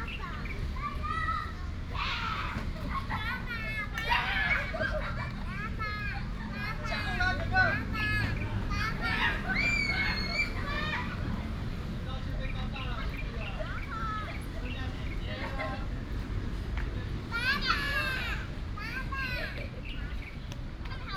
文昌休閒公園, Bade Dist., Taoyuan City - Children play with their father
in the park, Children's play area, Children play with their father, Birds, traffic sound
Bade District, Taoyuan City, Taiwan